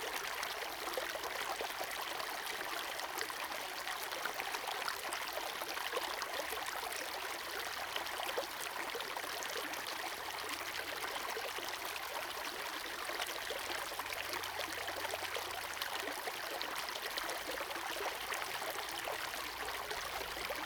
中路坑溪, 埔里鎮桃米里 - sound of water
sound of water
Zoom H2n MS+XY
Nantou County, Taiwan